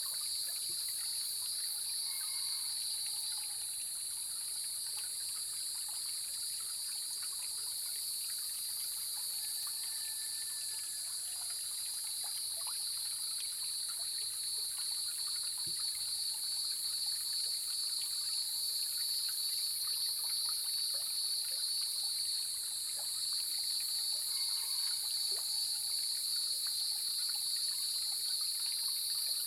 種瓜坑溪, 成功里 Puli Township - early morning
Insects sounds, Cicadas cry, Sound of water, Chicken sounds
Zoom H2n Spatial audio
Nantou County, Taiwan, 13 July 2016